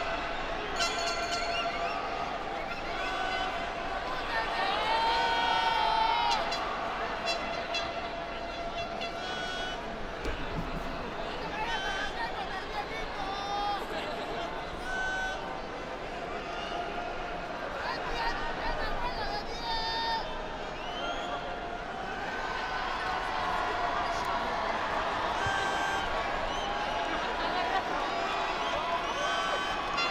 Dr. Lavista, Doctores, Cuauhtémoc, Ciudad de México, CDMX, Mexiko - Lucha Libre Arena Mexico
In Mexico City there are two official arenas where you can watch Lucha Libre. One is the huge Arena de México and the other is the Arena Coliseo.